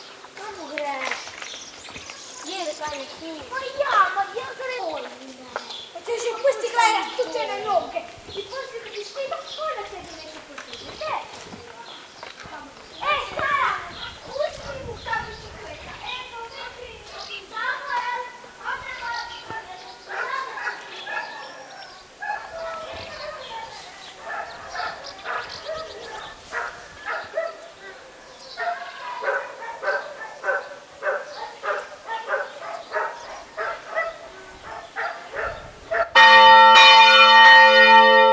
Croatia, Istria, dogs terrorised by the church bell sound - sound attack
children playing, dogs kept in cages near the church bell tower start barking a few seconds BEFORE the torturing sound beggins(many times per day, every day);loud sound of the bell, squeaking of dogs; we started recording the children, the rest startled us.